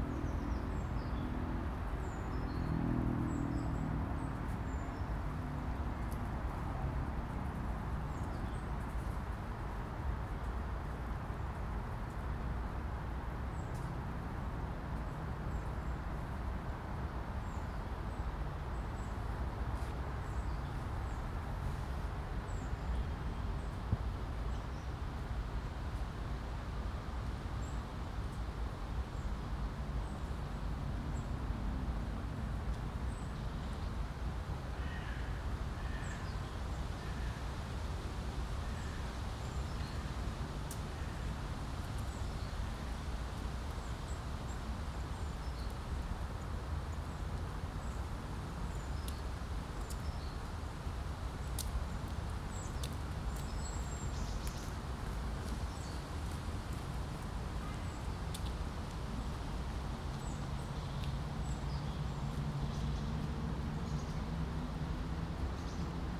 Парк отдыха, Київ, Украина - Voices birds & noises street
Мемориальный комплекс Бабий Яр.Пение птиц и шум улицы
Kyiv, Ukraine, 6 September